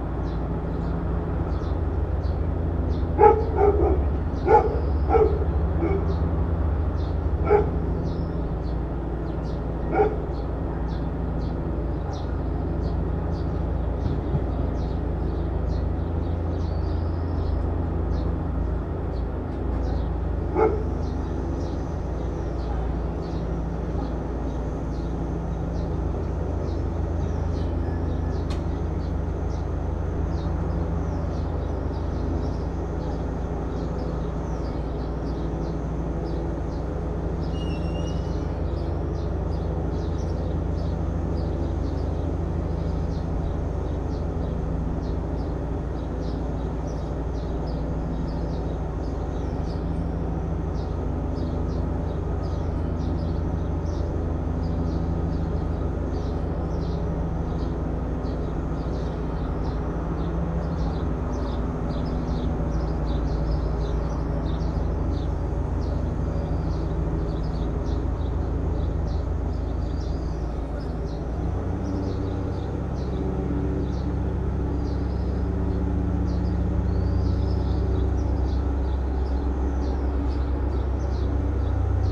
Maribor, Medvedova, Babica - friday morning lawnmovers
at exactly 10am on a friday morning in summer the neighborhood fills with the sounds of lawnmowers.
Maribor, Slovenia, 15 June 2012